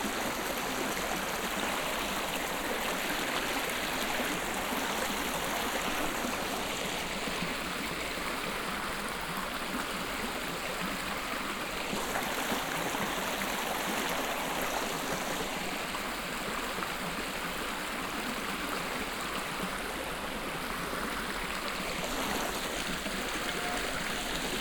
clervaux, welzerstross, small stream and train
At a small stream close to the railway track. A train passing and hooting a signal before entering a nearby mountain tunnel.
Project - Klangraum Our - topographic field recordings, sound objects and social ambiences
Luxembourg